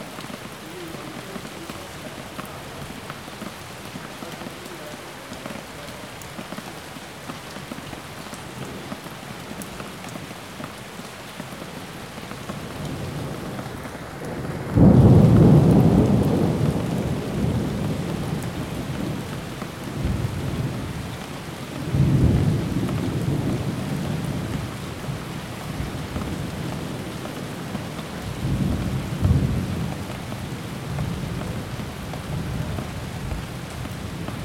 Jourdain, Paris, France - Rain and thunderstorm [Jourdain]
Paris.
Orage entendu depuis le 3eme étage d'un immeuble.Pluie qui tombe dans une petite rue.
Rain and thunderstorm heared from the 3rd floor window .